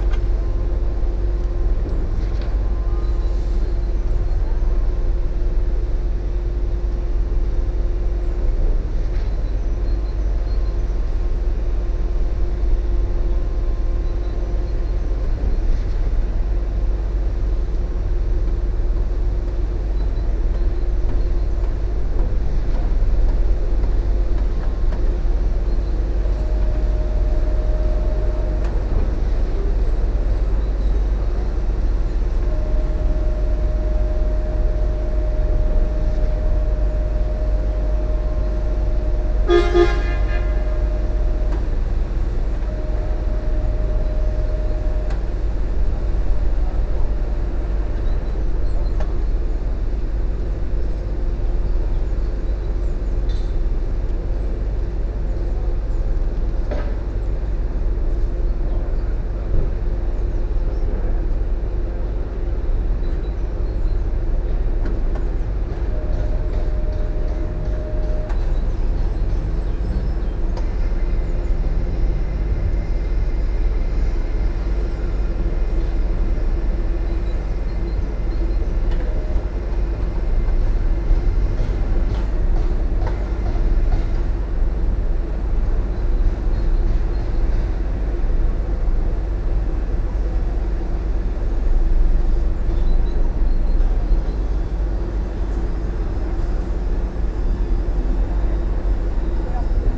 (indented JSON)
{
  "title": "housing complex, mateckiego street - new apartments",
  "date": "2019-06-06 10:36:00",
  "description": "construction site at the Mateckiego street. Once a small and quiet housing complex grew over the years. New apartments are being build all around the area. Construction site sounds bother the inhabitants as the works start around five in the morning, also at weekends. Only the deer that live on the grassland nearby don't seem to care. Even when there are heavy thumps and noise from the site, they don't even wake up from their sleep. The construction impacts the inhabitants on many levels. Grass spots where people used to walk their dogs have been fenced to store the building materials. A makeshift soccer field has been removed as well. Usual sounds of kids playing soccer also therefore vanished from regular weekend soundscape. (roland r-07)",
  "latitude": "52.46",
  "longitude": "16.90",
  "altitude": "98",
  "timezone": "Europe/Warsaw"
}